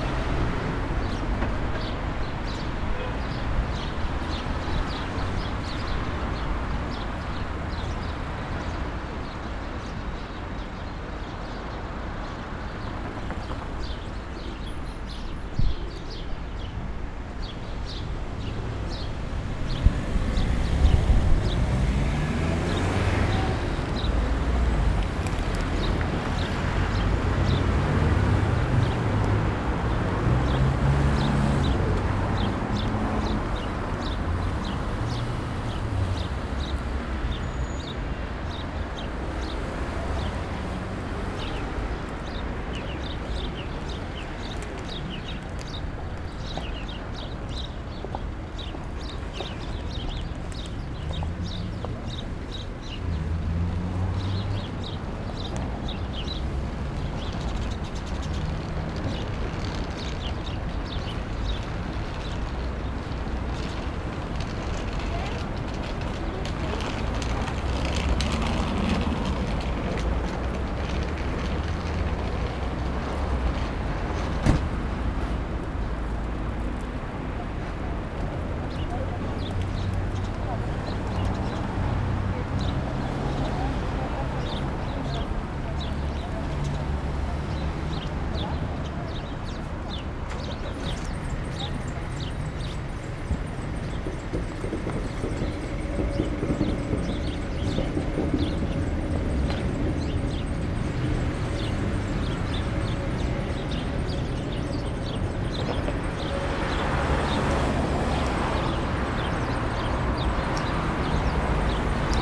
Bulevardul Corneliul Coposu, street